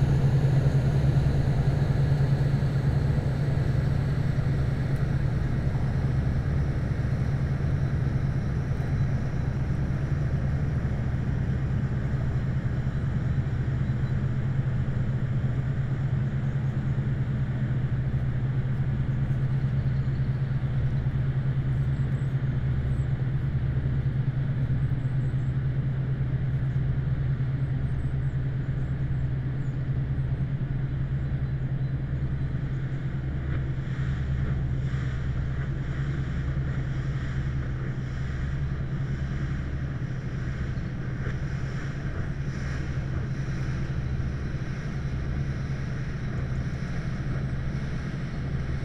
Riemst, Belgium - Boats on the Albertkanaal
On a sunny morning, two boats are passing on the Albertkanaal. In first, Figaro from Oupeye, Belgium, (MMSI 205203890, no IMO) a cargo ship, and after Phoenix from Ridderkerk, Netherlands, (MMSI: 244630907, no IMO) an engine dumper.